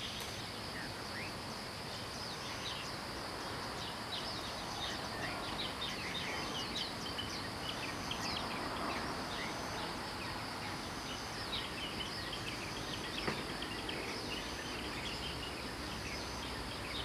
青蛙ㄚ 婆的家, 桃米里, Puli Township - Birds sound
Birds sound
Zoom H2n MS+XY
7 October 2015, 05:46